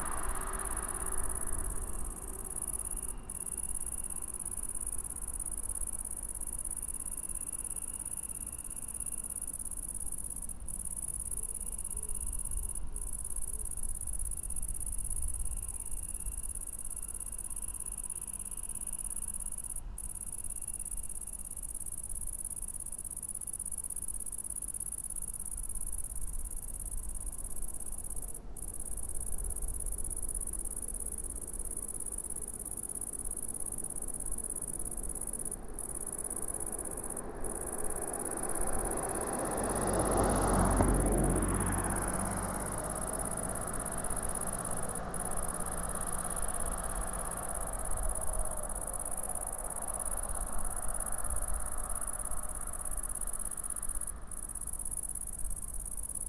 Løkeneshalvøya, 1392 Vettre, Norway, a meadow
26 August 2013